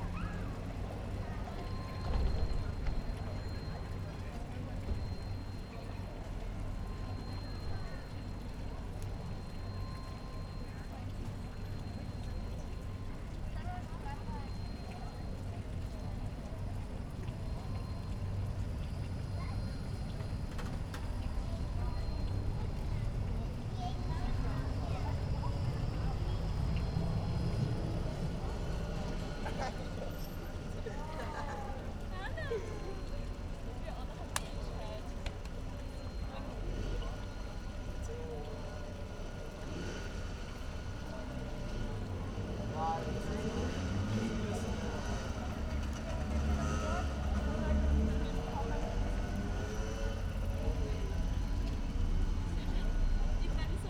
Linz, Taubenmarkt - street ambience /w sound installation
street ambience at Taubenmarkt Linz, trams, cars, people passing, a fountain, a sound installation
(Sony PCM D50, Primo EM172)